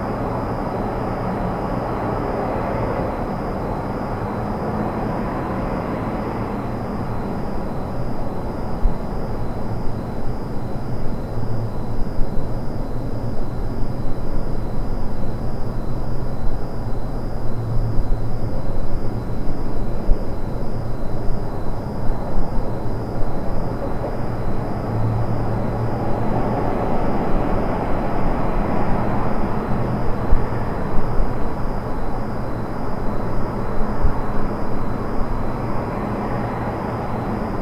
Crescent Heights, Calgary, AB, Canada - Garbage Room Bleeping
A weird refuge for the cold and tired. It was very warm in this outdoor nook, surrounded on almost all sides. This building is currently under construction, and it smells new and looks new, and no one is around. I could have fallen asleep; I should have fallen asleep. Why is the garbage room bleeping anyway?
Zoom H4n Recorder jammed under a locked door